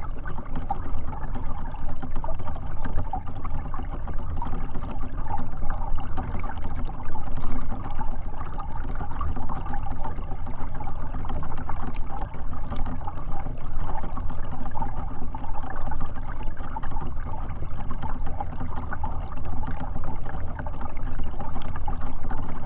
frozen twig tilted to river. contact microphones on the twig
Utenos apskritis, Lietuva, 7 January 2022